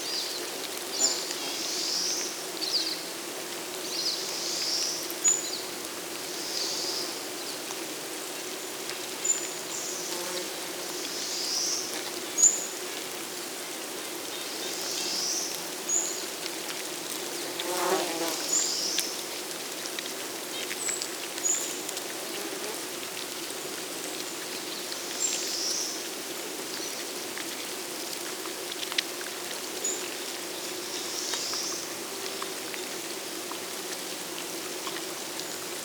Hornby Island, BC, Canada - Thatching ants, forest ambience
Very active thatching ant mound, with birds and forest ambience. Ants close-miked with LOM omni capsules, into Tascam DR-680mkII recorder.